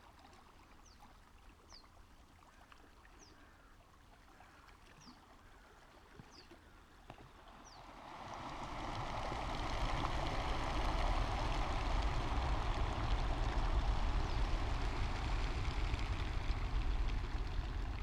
ENVOL CANARDS BALLET DE MOUETTES, Sortland, Norvège - ENVOL CANARD ET BALAIE DE MOUETTES
La beauté de cet envol de canard ce matin après le levé de soleil aux Vesteralen.... Puis 2 Mouettes m'int enchanté les oreilles dans une chorégraphie magnifique. Et enfin un petit seau est venu exiger l'acoustique du ce bord de mer boisé..... J'ai adoré
Nordland, Norge, 22 August 2021, 05:44